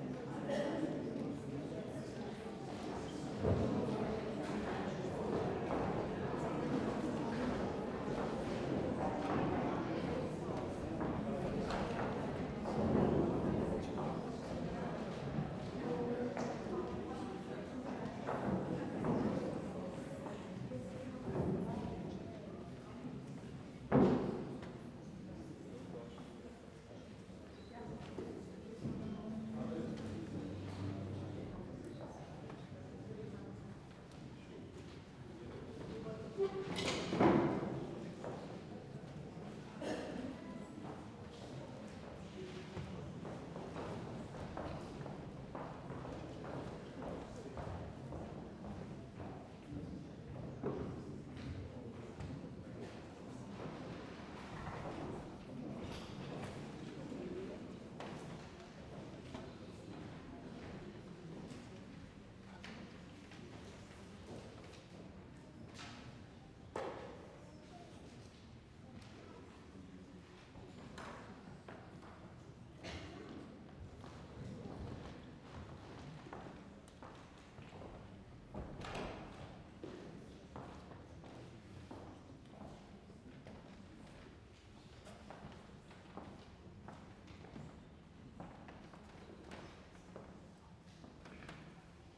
Zürich, Semper Aula ETH, Schweiz - Raumklang und Ansprache
In Gedanken an das Massaker in Srebrenica. Vor dem Konzert von Maria Porten "11. Juli 95 Srebrenica.